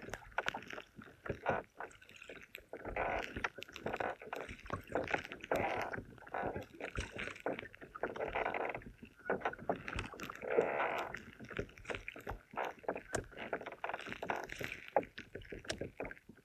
Canoeing on Loch Awe - Canoeing on Loch Awe
Alba / Scotland, United Kingdom, 7 May 2022